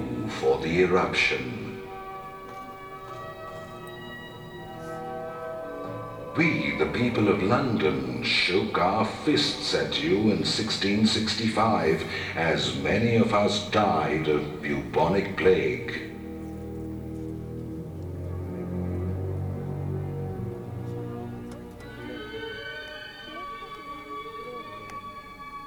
Priyadarshini Planetarium Rd, PMG, Thiruvananthapuram, Kerala, India - planetarium Trivandrum
a visit to the Tiravanantapuram planetarium